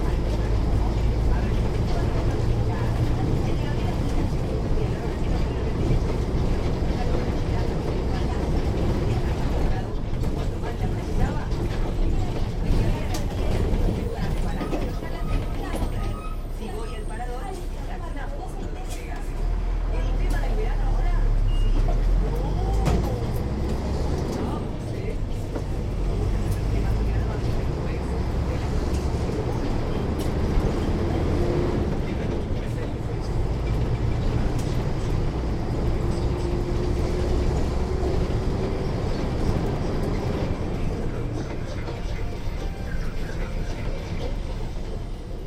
While the bus is going downtown the radio is playing the news
Montevideo, Uruguay, 15 March, 10:30